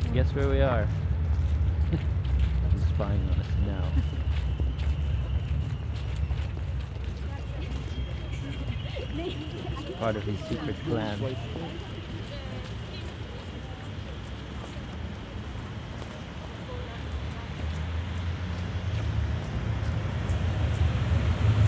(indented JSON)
{
  "title": "walking with GPS positioning device, Aporee workshop",
  "date": "2010-02-01 13:12:00",
  "description": "radio aporee sound tracks workshop GPS positioning walk part 3",
  "latitude": "52.52",
  "longitude": "13.41",
  "altitude": "39",
  "timezone": "Europe/Tallinn"
}